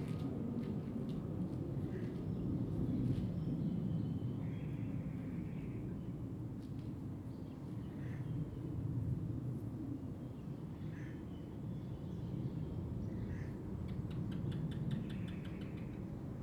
埔里鎮桃米里, Nantou County - Bird calls
Bird calls, Aircraft flying through
Zoom H2n MS+XY
Nantou County, Taiwan